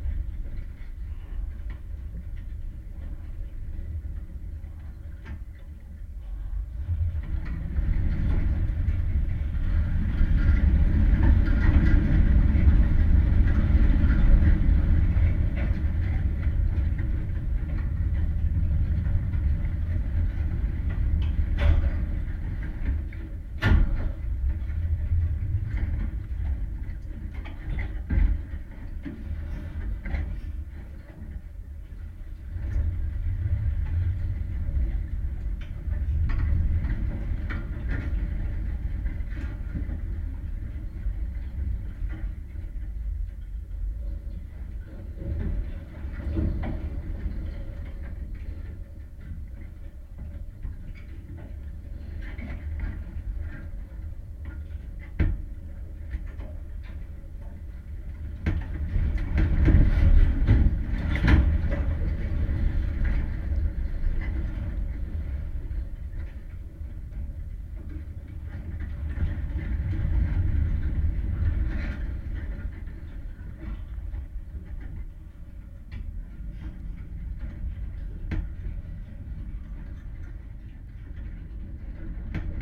Chania 731 00, Crete, metallic fence
metallic fence in a vineyard. contact microphones
7 May 2019, 14:30